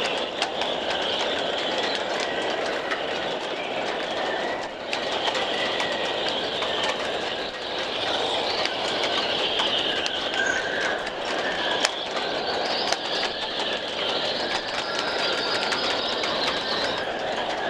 Granville, France - tempête/port du hérel/plus fort
same place/time
louder
23 December 2013